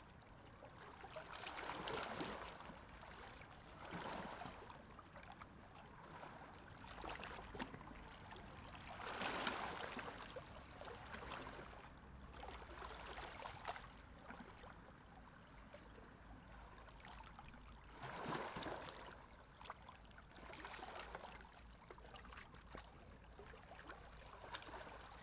rijeka, preluka, sea, waves, rocks
Opatija, Croatia